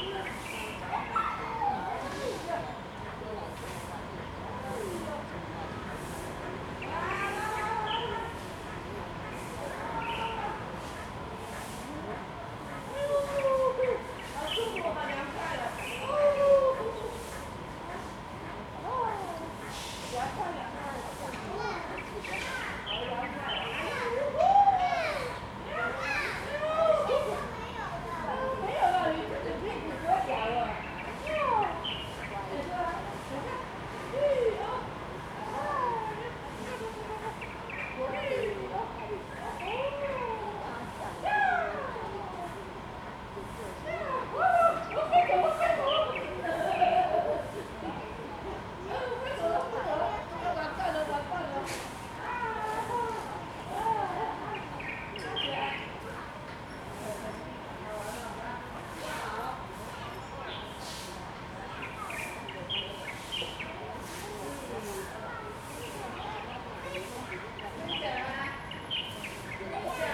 in the small Park, Children and the elderly, Sweep the floor, Sony ECM-MS907+Sony Hi-MD MZ-RH1